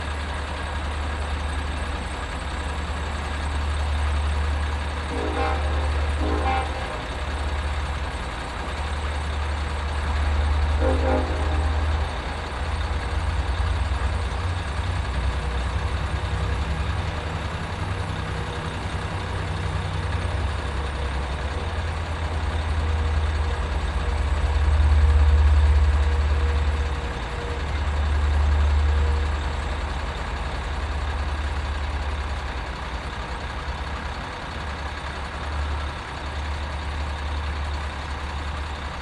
Borden Ave, Long Island City, NY, Verenigde Staten - Long Island City Railway
Zoom H4n Pro